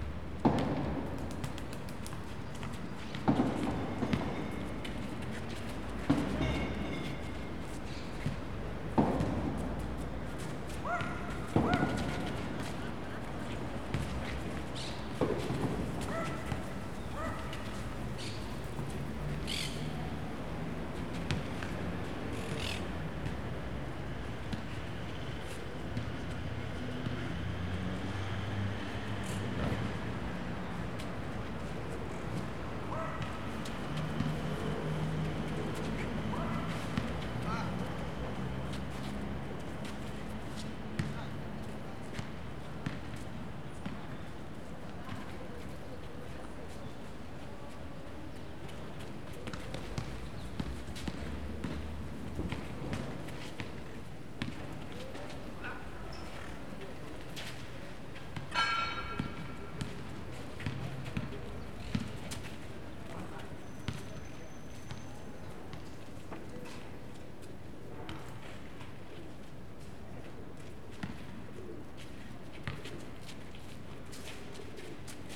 People playing basketball below me in the park on a Saturday morning, while the birds (parakeets and pigeons) behind me eat grains that somebody gave them. In the second half of the recording you can hear a man pushing a shopping trolley of empty bottles along the pavement to the recycling bank, then dropping them in.
Recorded with ZOOM H4n.